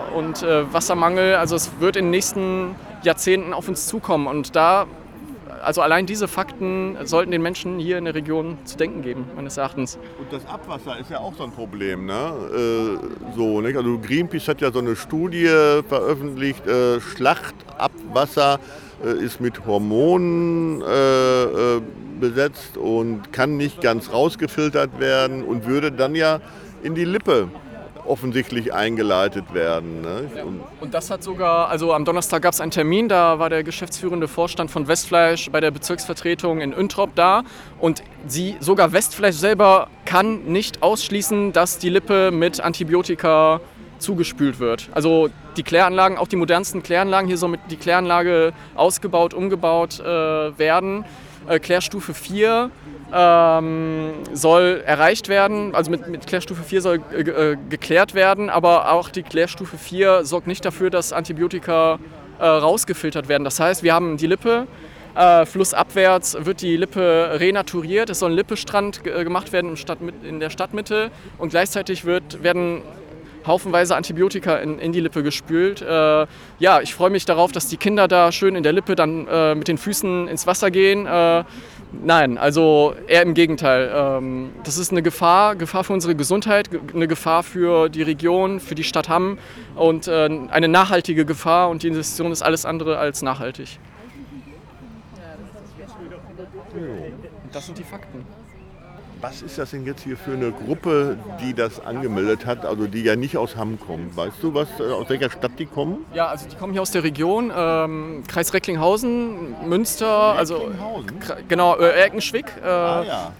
Kranstraße, Hamm, Germany - Mahnwache bei Westfleisch Hamm-Uentrop
Während der Interviewaufnahmen müssen die Sprecher*innen immer wieder pausieren wenn gerade wieder ein Laster mit 200 Schweinen in das Werksgelände einbiegt. Eindringliche Vergegenwärtigung des Ausmasses des Tierschlachtens, dass so der Plan, noch um mehr als das Dreifache anwachsen soll.